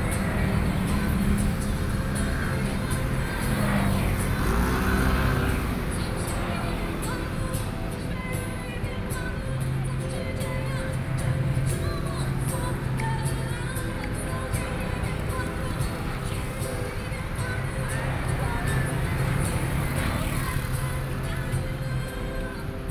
Zhongshan S. Rd., Taipei City - Mix
Place the music and traffic noise, Sony PCM D50 + Soundman OKM II